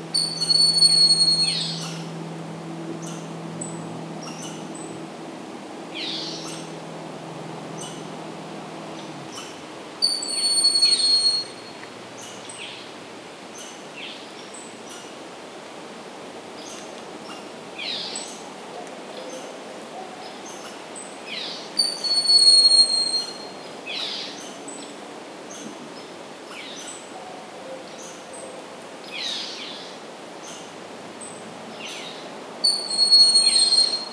Mikisew Provincial Park, Ontario, Canada - Broad-winged hawk
Broad-winged hawk on top of tall tree, several other species heard. Also passing car, distant motorboats. Zoom H2n with EQ and levels post.